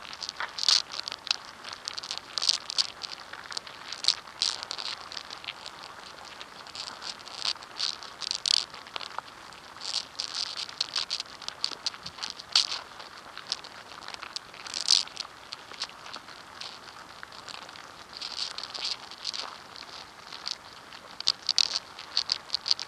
Recording from inside an ant nest. Piezo mic. Mono.
Sweden